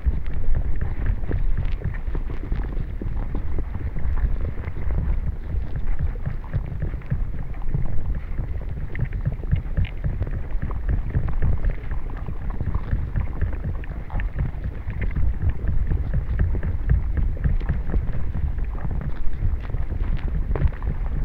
Vyžuonos, Lithuania, flooded river underwater
it is interesting to visit the same sound places in different seasons. underwater of flooded river in springtime
12 March 2019, 14:30